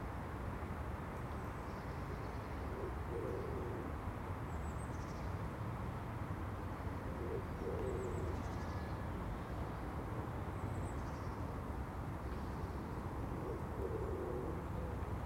Contención Island Day 25 outer northeast - Walking to the sounds of Contención Island Day 25 Friday January 29th
The Poplars Roseworth Avenue The Grove Church Avenue Church Road
In the graveyard
headstones tumbled down
A metro passes
jackdaws call
Behind me
somewhere inside a yew tree
a pigeon coos
England, United Kingdom, 29 January 2021, 10:49